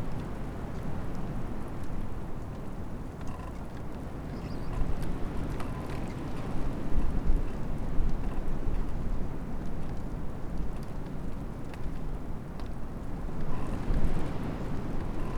lancken-granitz: holunderbaum - the city, the country & me: elder tree
same tree next day
the city, the country & me: march 8, 2013
Amt für das Biosphärenreservat Südost-Rügen, Germany, 8 March 2013